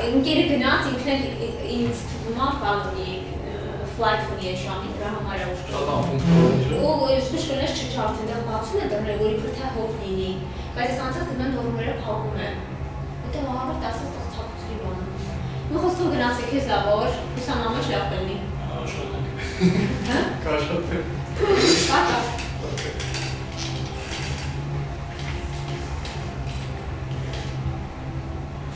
Yerevan, Arménie - Hotel receptionist
The One Way hostel is a cheap and extremely friendly hostel, in the center of Erevan called Kentron, only five minutes to walk to the Republic square. It’s good for backpackers. During this late evening, a concert is occurring on Charles Aznavour square. The friendly receptionist is explaining the day to the substitute doing the night.
Yerevan, Armenia, 1 September 2018